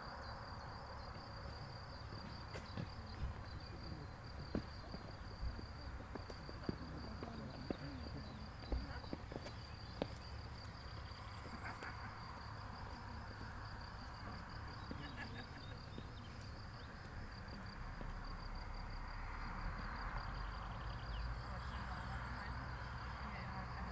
Schloßprunn, Riedenburg, Deutschland - An der Burg Prunn
Stimmen. Grillen zirpen. Aussenaufnahme.
Riedenburg, Germany